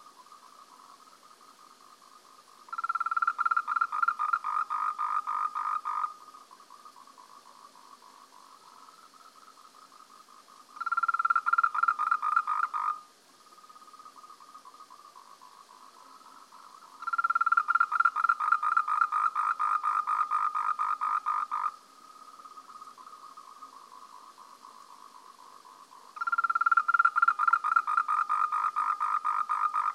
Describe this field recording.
croak of an endemic tree frog of Taiwan, Rhacophorus moltrechti, emitted from road side ditch, at the elevation of 1,500 M.